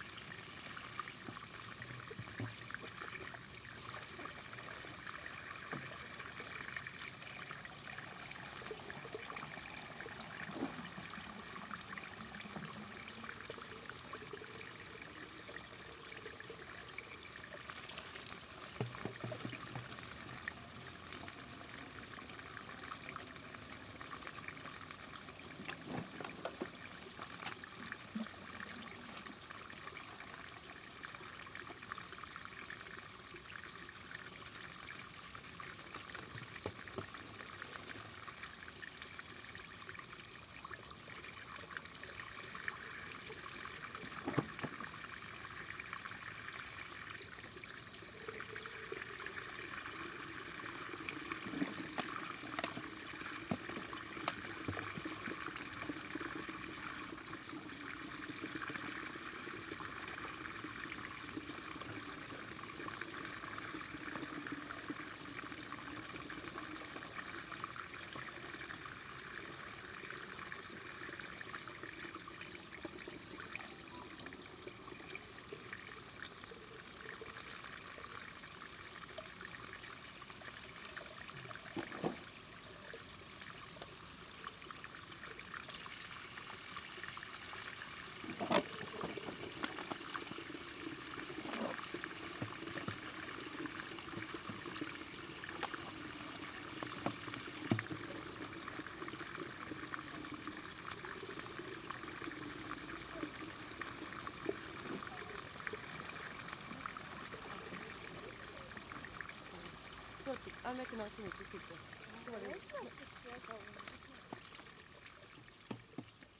Aglonas basilica, Latvia, the Holy Spring
One of the oldest and most popular springs in Latgale region. People come here to fill yje bottles with fresh "holy" water
Latgale, Latvija, 30 July 2020, 16:30